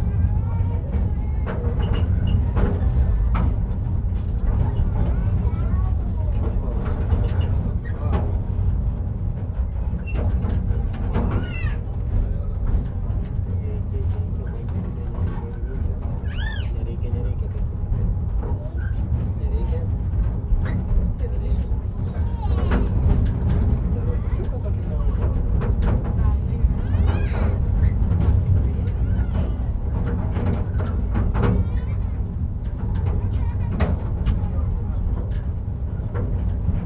Anykščiai, Lithuania, back to trainstation
tourist train returns to trainstation